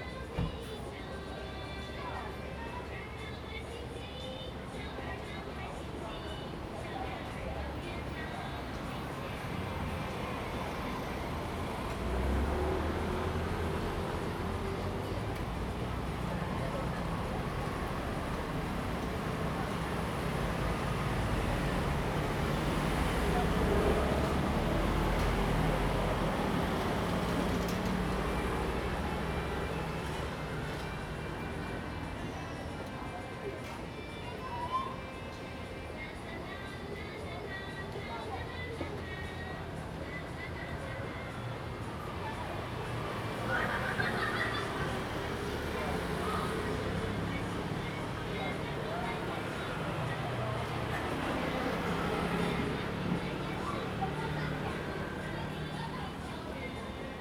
碧潭食堂, Xindian Dist., New Taipei City - In front of the restaurant
In front of the restaurant, Traffic Sound
Zoom H2n MS+ XY